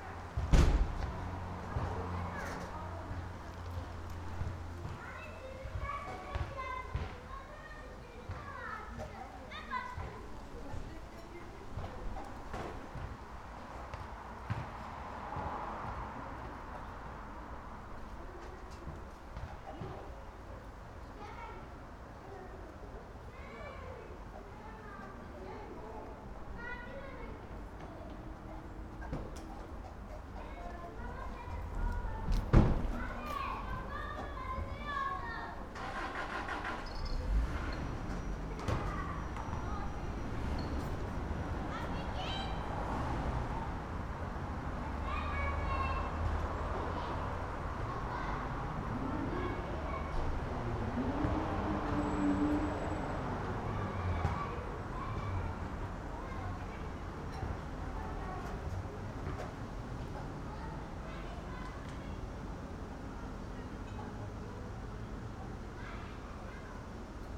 Köln, Richard-Wagner-Str. - living situation
backyard, slightly strange living situation, inbetween designed appartments and social housing
Köln, Deutschland